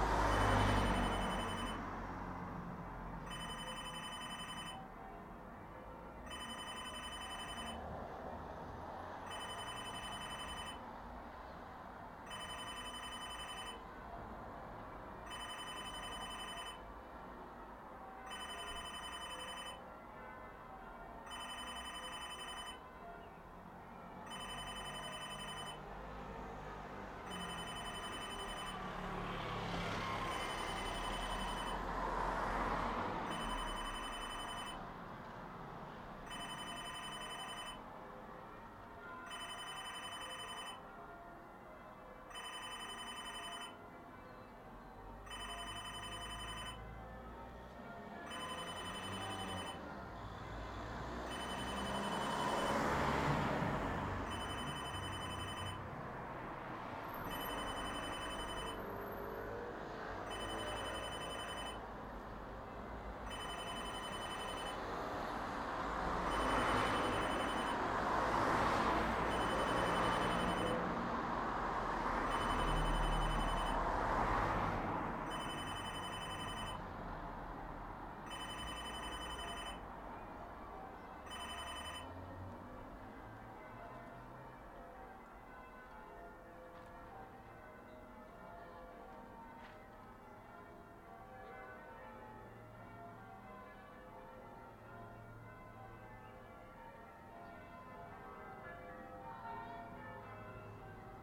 Telephone booth, Reading, UK - The sound of a telephone booth bell
Telephone booths have a sort of relic-like quality about them now that we all have mobile phones, and I found myself wondering how long it's been since this booth was either used to place or receive a call. You can hear the sounds of traffic on the road, the reflections from the bell-ringing practice further down the hill, and the mournful bleat of the unanswered phone as it rings away on its rocker.